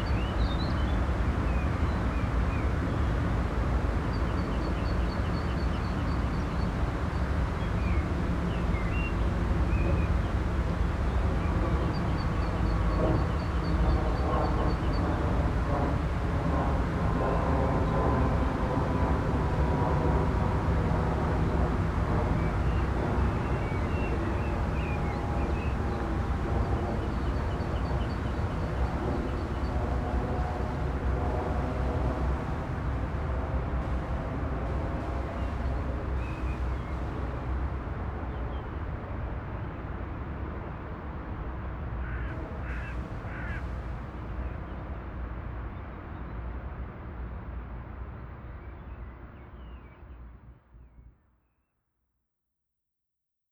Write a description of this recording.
Eine weitere Aufnahme an der Richard Serra Installation, einige Jahre später. Der Klang eines Flugzeugs, das das Gelände überquert, das Rauschen der nahen Autobahn, einige Krähen. A second recording at the installation of Richard Serra, some years later. The sound of a plane crossing the areal and the sound of the traffic from the nearby highway, some crows flying across the platform. Projekt - Stadtklang//: Hörorte - topographic field recordings and social ambiences